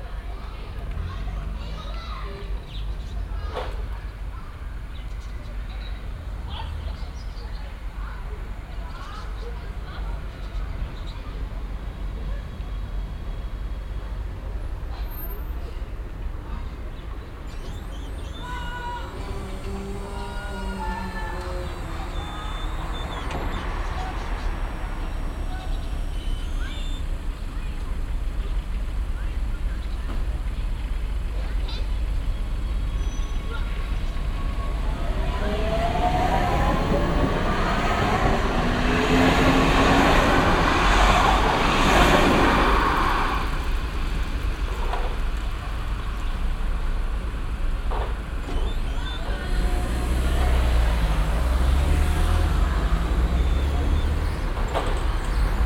frueher morgen, verkehr und passanten am bahnübergang, einfahrt der bahn, schliessen der schranke, vorbeifahrt bahn, öffnen der schranke, abfliessen des wartenden verkehrs, schulkinder
soundmap nrw - social ambiences - sound in public spaces - in & outdoor nearfield recordings